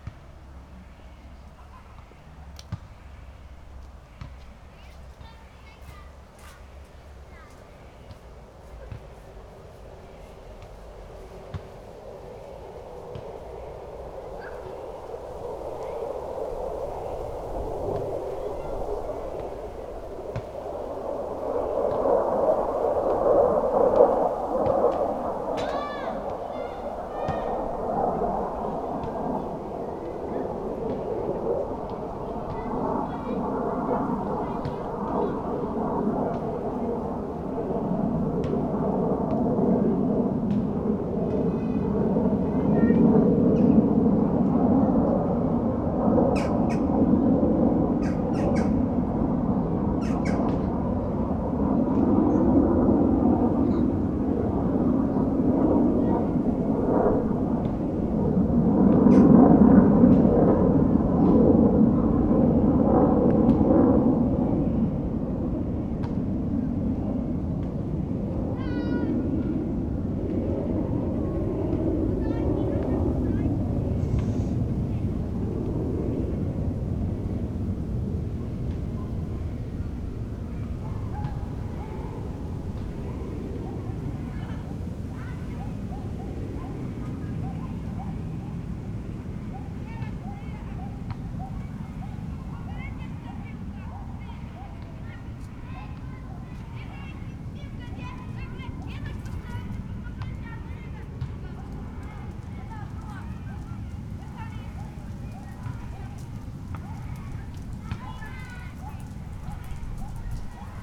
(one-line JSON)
{"title": "Poznan, Sobieskiego housing estate - jet / kids play / housing estate ambience", "date": "2012-09-20 12:44:00", "description": "a mild september afternoon. ambience in the heart of the housing estate, jet, kinds playing during classes break.", "latitude": "52.46", "longitude": "16.91", "altitude": "101", "timezone": "Europe/Warsaw"}